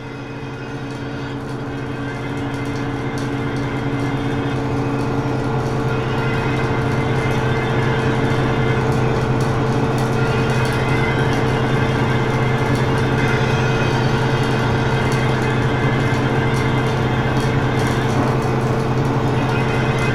Mont-Saint-Guibert, Belgique - The dump
This is the biggest dump of Belgium. Recording of an elevated tube doing strange noises.
Mont-Saint-Guibert, Belgium